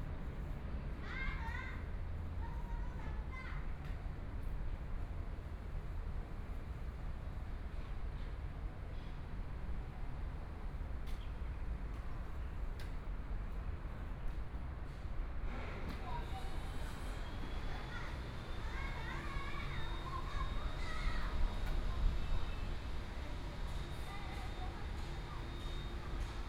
XingYa Park, Taipei City - in the Park
Holiday in the Park, Sitting in the park, Traffic Sound, Birds sound, Children and parents playing badminton
Please turn up the volume a little. Binaural recordings, Sony PCM D100+ Soundman OKM II
Taipei City, Taiwan, 4 April 2014, ~17:00